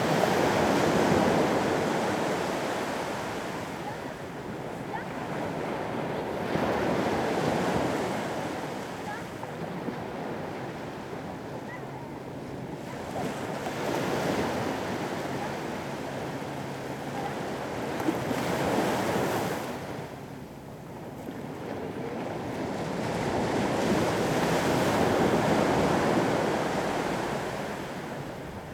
Frankrijk - sea
recorded summer 2009